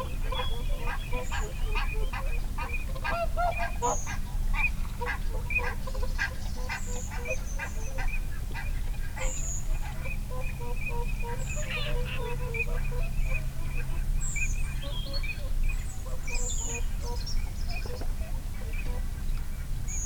teal call soundscape ... dpa 4060s clipped to bag to zoom f6 ... folly pond hide ... bird calls from ... snipe ... redwing ... whooper swan ... shoveler ... mute swan ... moorhen ... wigeon ... barnacle geese ... pink-footed geese ... time edited unattended extended recording ... background noise ...
Alba / Scotland, United Kingdom, 4 February